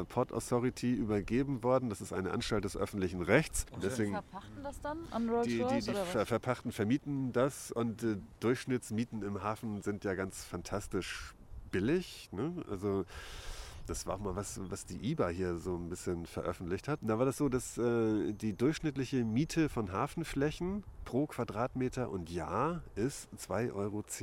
Wem gehört das Land? Was ist sein Preis? Die Besitzverhältnisse im Hafen.
Hamburg, Germany